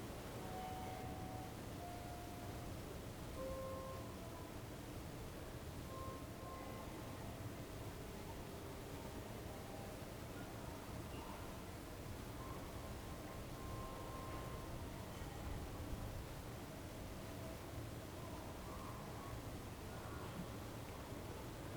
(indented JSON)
{
  "date": "2020-05-15 20:43:00",
  "description": "\"Evening with storm, dog and rain in the time of COVID19\" Soundscape\nChapter LXXVIî of Ascolto il tuo cuore, città. I listen to your heart, city\nFriday May 15th 2020. Fixed position on an internal terrace at San Salvario district Turin, sixty six days after (but day twelve of Phase II) emergency disposition due to the epidemic of COVID19.\nStart at 8:43 p.m. end at 9:20 p.m. duration of recording 36’53”",
  "latitude": "45.06",
  "longitude": "7.69",
  "altitude": "245",
  "timezone": "Europe/Rome"
}